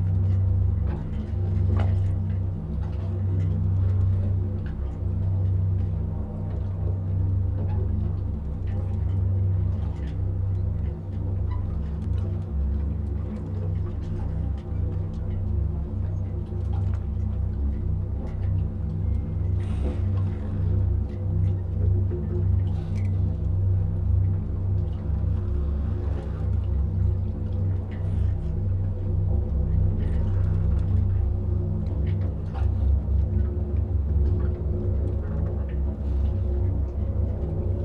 An on-site recording of the O+A installation Blue moon transforming the ambience around the small marina in real time with a resonance tube